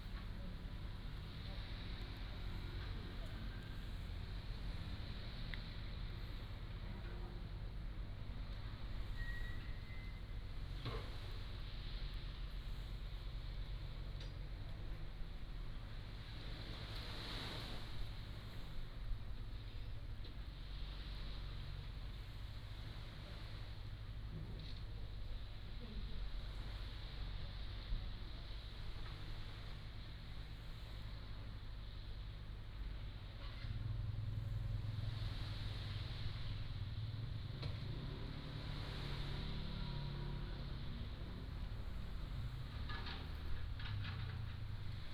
馬鼻灣海濱公園, Beigan Township - Waterfront Park
Waterfront Park, On the coast, Sound of the waves, Birds singing
馬祖列島 (Lienchiang), 福建省, Mainland - Taiwan Border, 15 October 2014